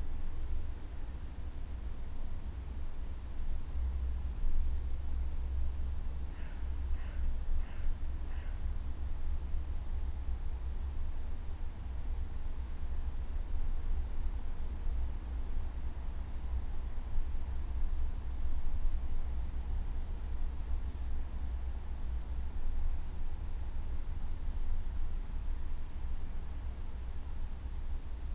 Le[]rstelle - ein kunsttherapeutisches Projekt, welches sich als Rauminstallation mit dem Thema Stille auseinander setzt. Zu besuchen im Park des Klinikums Christophsbad in Göppingen....
heima®t - eine klangreise durch das stauferland, helfensteiner land und die region alb-donau